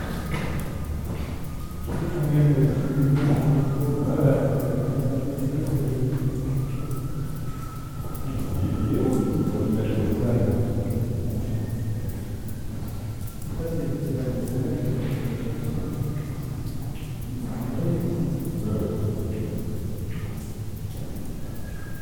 Valbonnais, France - Valbonnais mine
Resonance in the Valbonnais cement underground mine. Friends are walking more and more far. It's becoming hard to understand them because of the underground cathedral reverberation.
24 May